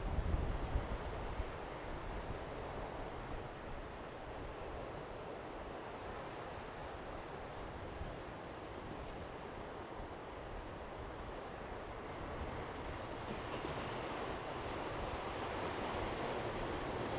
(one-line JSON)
{"title": "Ponso no Tao, Taiwan - On the coast", "date": "2014-10-28 19:01:00", "description": "On the coast, Traffic Sound, Sound of the waves\nZoom H2n MS +XY", "latitude": "22.06", "longitude": "121.51", "altitude": "8", "timezone": "Asia/Taipei"}